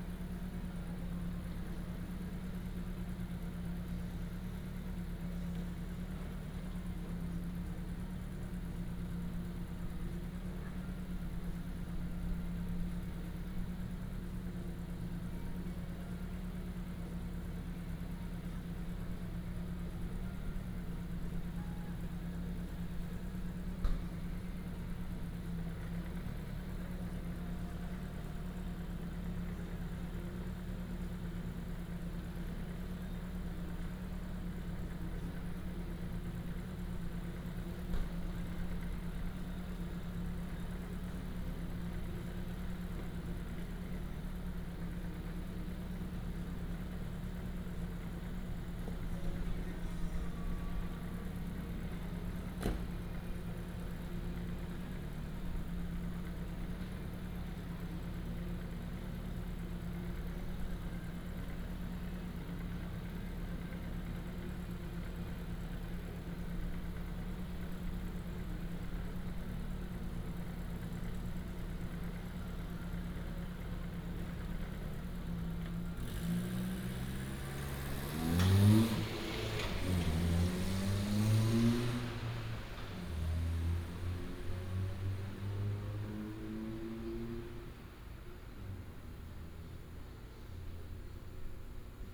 Zhongxing Rd., Fangliao Township - Late night street
Night outside the convenience store, Late night street, Traffic sound, Truck unloading, Truck unloading
Pingtung County, Taiwan, March 2018